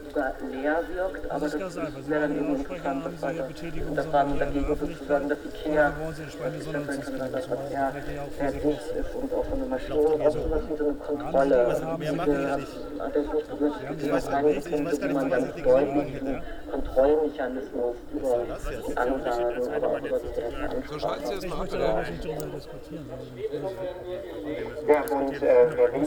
Sendung Radio FSK/Aporee in der Großen Bergstraße wird von der Polizei verboten. Teil 5 - 1.11.2009
Hamburg, Germany, 1 November 2009, 21:41